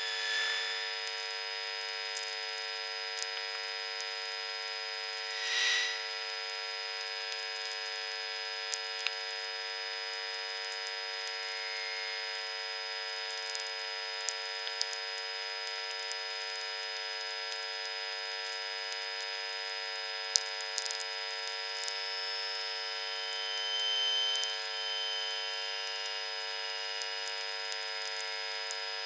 Utena, Lithuania, traffic as electromagnetic field
electromagnetic antenna near the street. cars passing by...